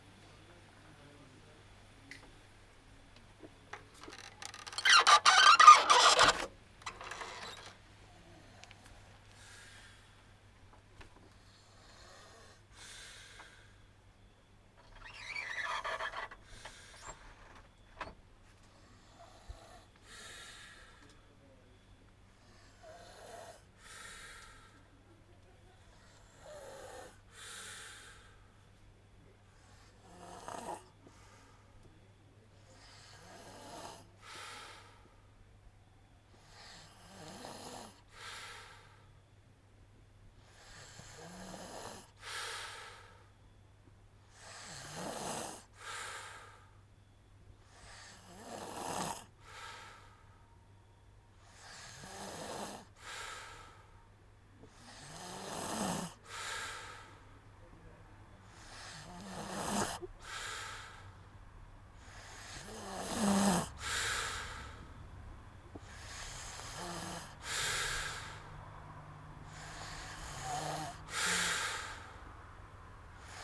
Ulupınar Mahallesi, Çıralı Yolu, Kemer/Antalya, Turkey - Snoring
Aylak Yaşam Camp, nighttime snoring sound